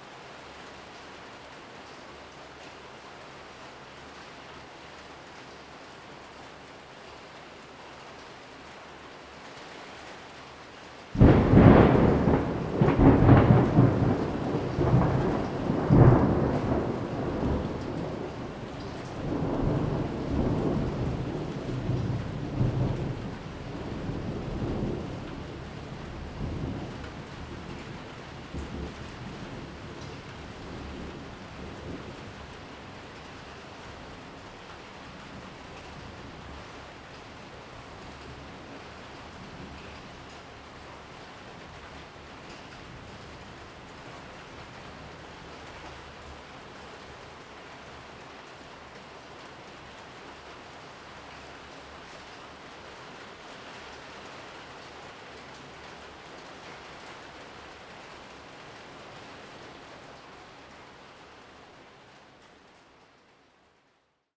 大仁街, Tamsui District - Rain and Thunder
Rain and Thunder
Zoom H2n MS+XY